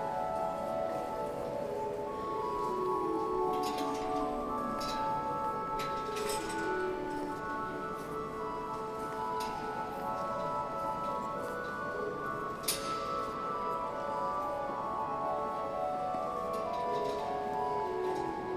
San Marco, Wenecja, Włochy - Soundcheck before the organ concert
Soundcheck before the organ concert.
OLYMPUS LS-100
Venezia, Italy, 11 December, 15:28